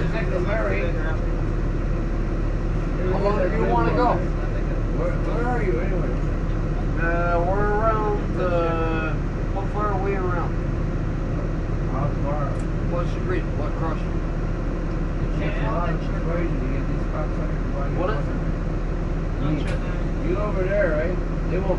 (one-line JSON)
{"title": "Montreal: Monkland to Sherbrooke (bus #19) - Monkland to Sherbrooke (bus #19)", "date": "2008-11-11 17:00:00", "description": "equipment used: Sansa e200 w/ Rockbox\nRiding the 17 bus south from CDN to NDG with some drunks from Laval and a few crotchety Jamaican ladies", "latitude": "45.48", "longitude": "-73.62", "altitude": "73", "timezone": "America/Montreal"}